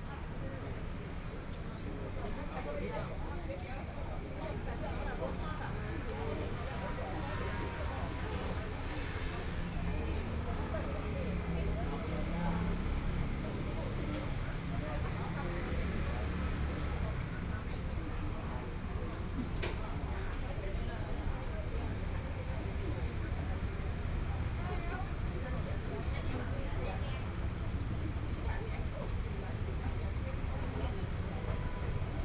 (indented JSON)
{
  "title": "花蓮市國富里, Taiwan - Corner the market",
  "date": "2014-02-24 16:50:00",
  "description": "sitting in the Corner of the market, Traffic Sound\nBinaural recordings\nZoom H4n+ Soundman OKM II",
  "latitude": "23.99",
  "longitude": "121.60",
  "timezone": "Asia/Taipei"
}